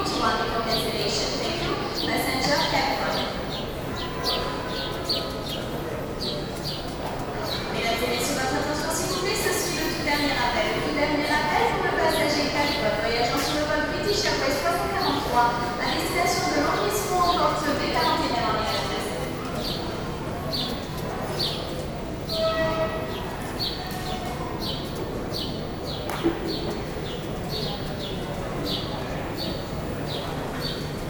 nizza, airport, terminal 1, departure gates & shopping zone
tweeting small birds in the departure zone of the airport, some announcements
soundmap international: social ambiences/ listen to the people in & outdoor topographic field recordings